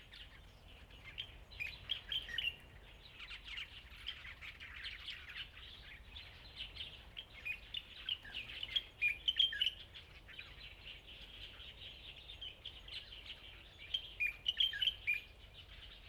林投村, Huxi Township - Birds singing
Birds singing, In the park, In the woods
Zoom H2n MS +XY
Husi Township, 澎20鄉道, 21 October 2014